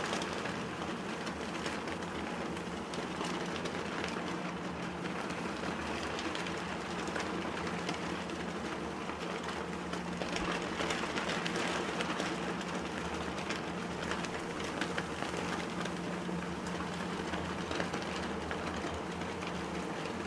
equipment used: Nagra Ares MII
National flags flapping in the wind outside the Stade Olympique
QC, Canada, June 2008